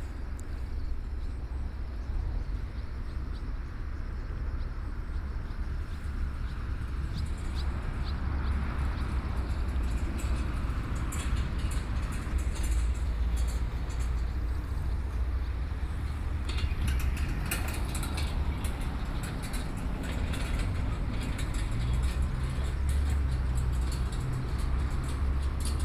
Traffic Sound, Standing on the shore mention
Sony PCM D50+ Soundman OKM II
Yilan County, Wujie Township, 五結堤防道路, 22 July 2014